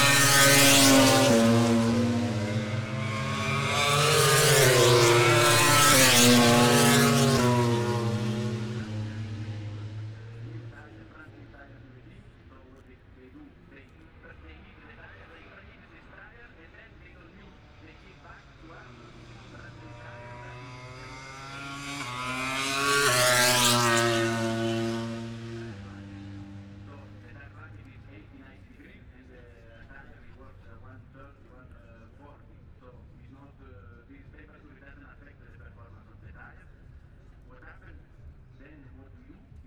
Silverstone Circuit, Towcester, UK - british motorcycle grand prix ... 2021
moto grand prix ... free practice three ... copse corner ... dpa 4060s to MixPre3 ...
East Midlands, England, United Kingdom